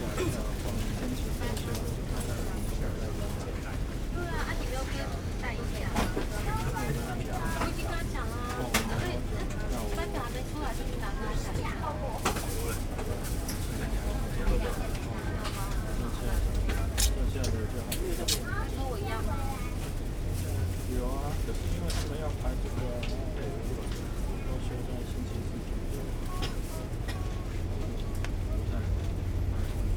Sanmin, Kaohsiung - inside the Trains